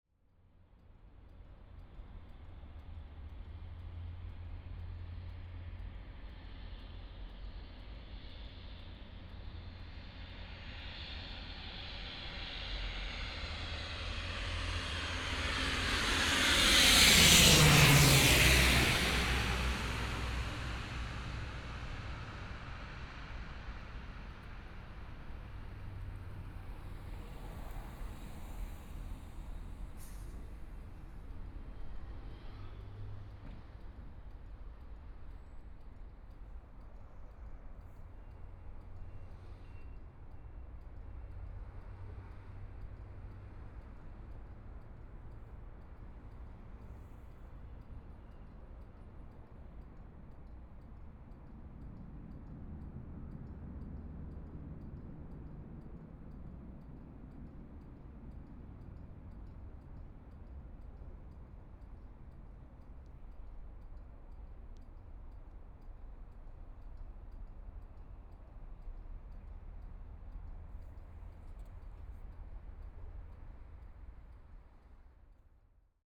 中山區大佳里, Taipei City - Aircraft flying through
Aircraft flying through
Please turn up the volume a little.
Binaural recordings, Zoom 4n+ Soundman OKM II